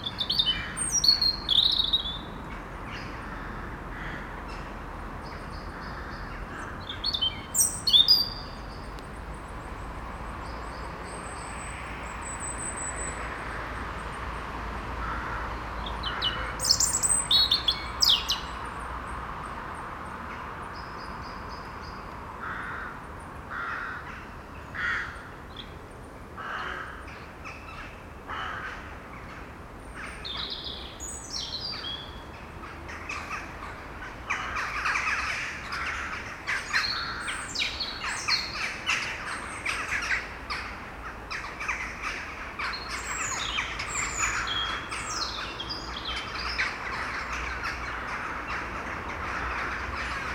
Maintenon, France - Crows war
In this private wood, nobody is going and crows are living. Every evening, they talk about their day, it makes very noisy screams you can hear every winter early on the evening (something like 5 PM). I put a microphone in the forest and went alone in the kindergarden just near. At the beginning of the recording, a blackbird sing very near the recorder. A plane is passing by and after, the crows make war, as every evening. This is christmas and there's a lot of cars driving the small street called rue Thiers.